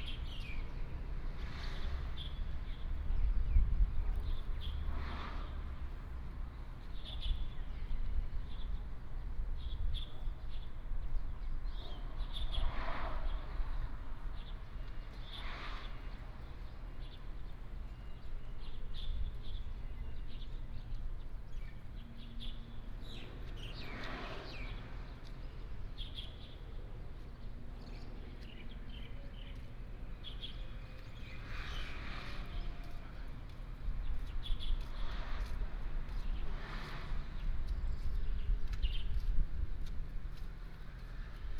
拉勞蘭部落 Lalaulan, Taimali Township - In aboriginal tribal streets
In aboriginal tribal streets, Traffic sound, Bird cry, Old man and footsteps